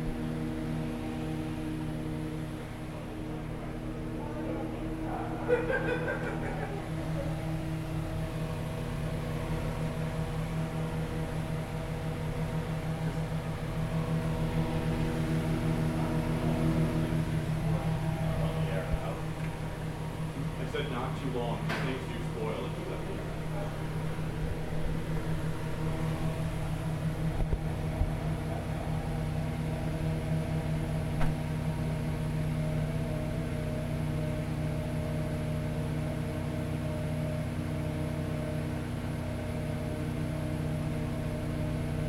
Bolton Hill, Baltimore, MD, USA - cool
its cool in here
19 September, 10:30